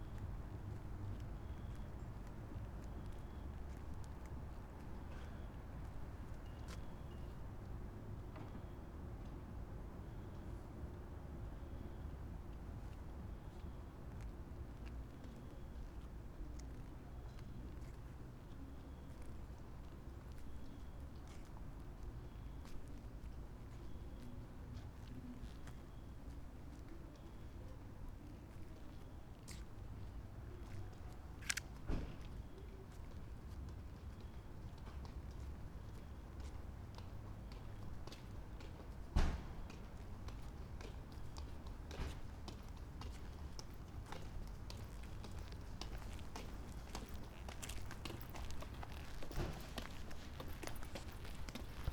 Parco della Rimembranza, Trieste, Italy - almost midnight, castro
6 September 2013